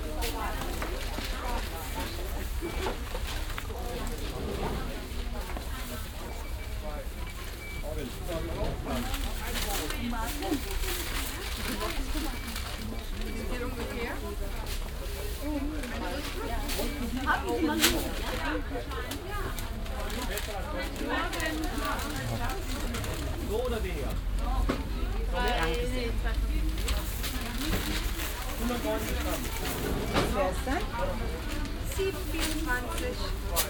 refrath, markplatz, wochenmarkt, gemüsestand
morgens am markt - gemueseverkäufer und kunden, papiertüten und kleingeld
soundmap nrw
social ambiences - topographic field recordings